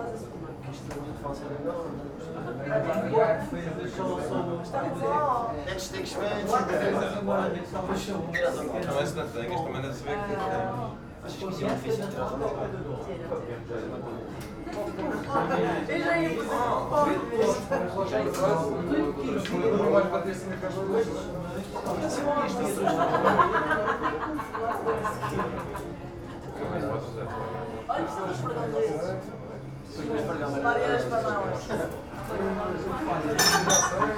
Funchal, Venda Velha - regional punch
mellow atmosphere at venda velha bar.